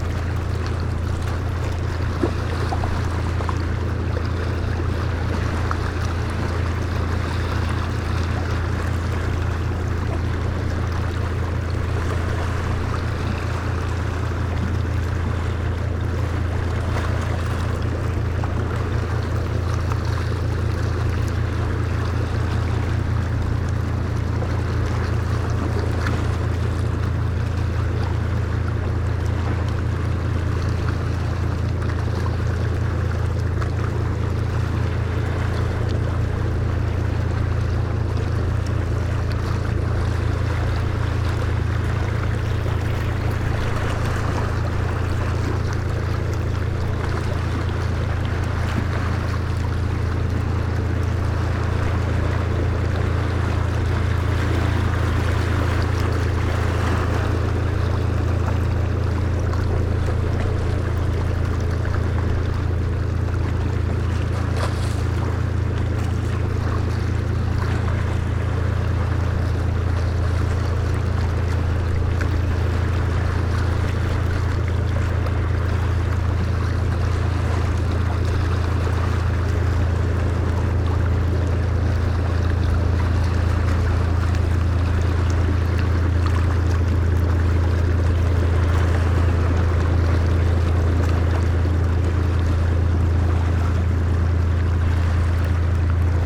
afternoon sea, Novigrad, Croatia - eavesdropping: under straw hat
as lazy cat would do - under a straw hat, listening to the sea voices
11 September 2012